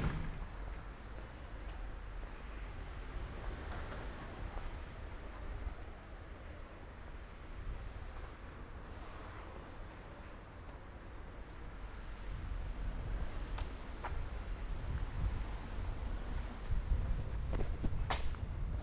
Ascolto il tuo cuore, città. I listen to your heart, city. Chapter LXXXV - Night walk et Bibe Ron in the days of COVID19 Soundwalk
"Night walk et Bibe Ron in the days of COVID19" Soundwalk"
Chapter LXXXV of Ascolto il tuo cuore, città. I listen to your heart, city
Saturday, May 23th 2020. Night walk and drinking a rum at Bibe Ron, re-opend as many others local in the movida district of San Salvario, Turin. Seventy-four days after (but day twenty on of Phase II and day seven of Phase IIB ad day 1 of Phase IIC) of emergency disposition due to the epidemic of COVID19.
Start at 10:38 p.m. end at 11:27 p.m. duration of recording 49’26”
The entire path is associated with a synchronized GPS track recorded in the (kmz, kml, gpx) files downloadable here:
Provincia di Torino, Piemonte, Italia